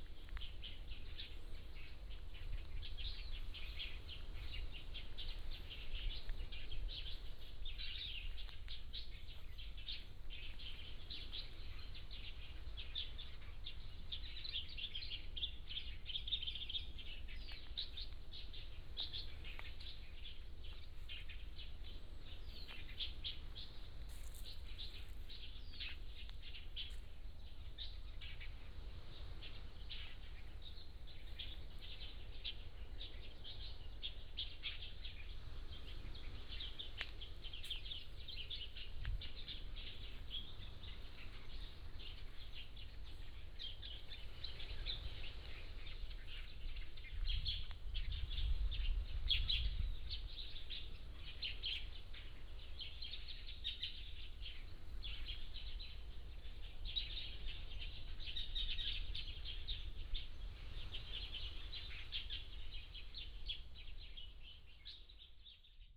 林投村, Huxi Township - Birds singing
in the woods, Birds singing, Sound of the waves